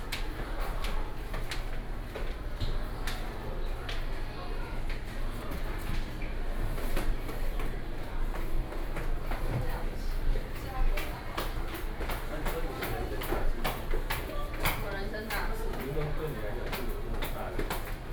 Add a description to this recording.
inside the Bookstore, Stairwell, Sony PCM D50 + Soundman OKM II